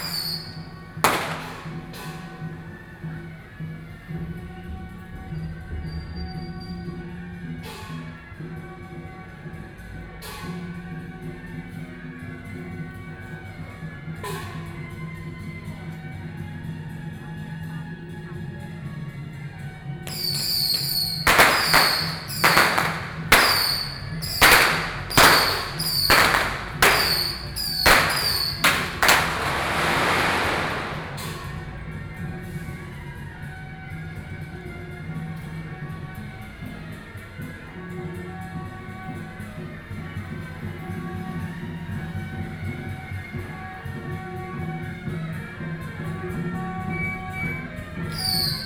Sec., Hankou St., Wanhua Dist., Taipei City - Traditional temple festivals
Firework, Traditional temple festivals, Binaural recordings, Sony PCM D50 + Soundman OKM II, ( Sound and Taiwan - Taiwan SoundMap project / SoundMap20121115-2 )